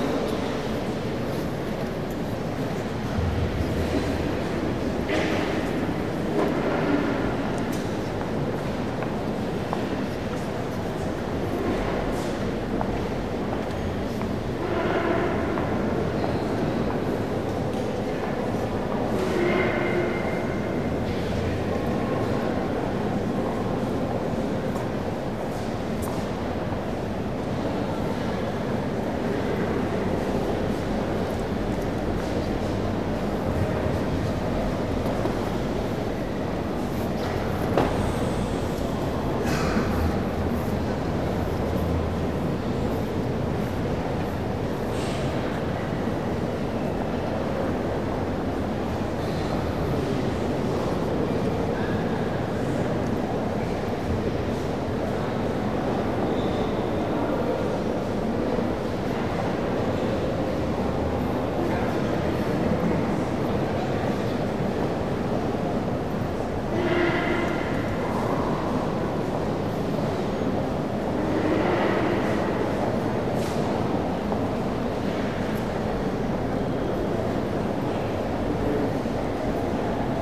paris, notre dame, inside church
Interior Atmosphere in the Nave of Notre Dame in the early afternoon - the church reverbance echoing the steps and talks of the tourist visitor inavsion
international cityscapes - social ambiences and topographic field recordings